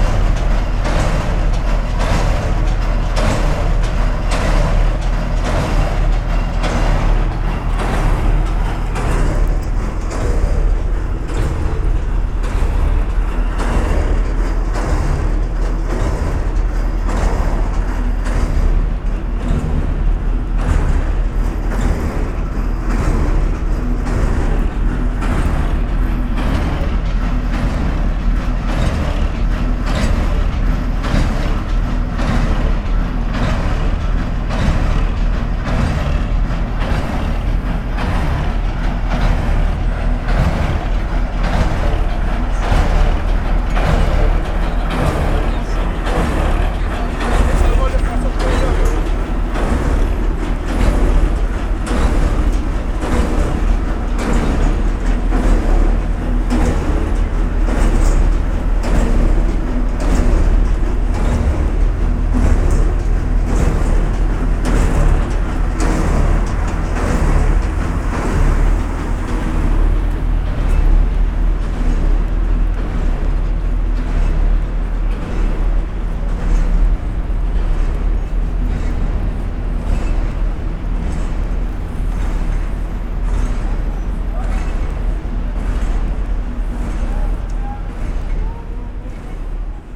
equipment used: M-Audio MicroTrack II
Pile driver's constant thundering rhythm at construction site at Boul. De Maisonneuve & Rue Metcalfe